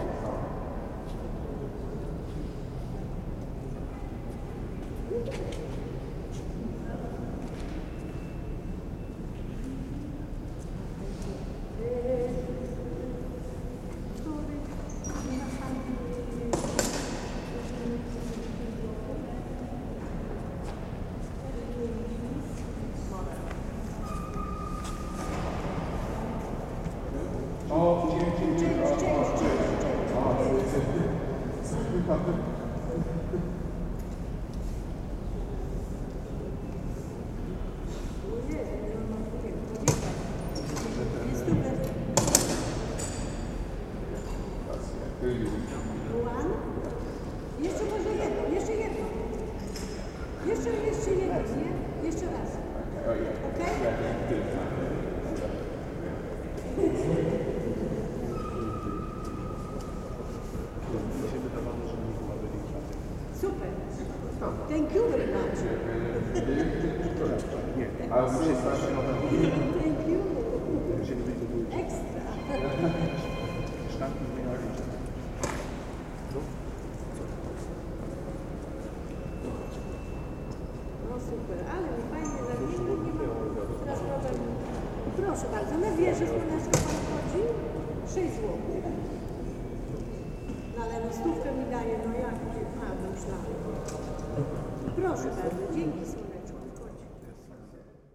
Voices from ticket office with the ambience of the St John Cathedral.